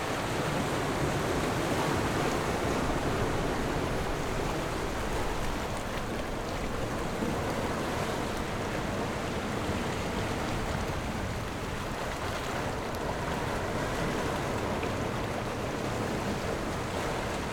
On the coast, sound of the waves
Zoom H6 +Rode NT4
Taitung County, Taiwan, 29 October 2014, 12:28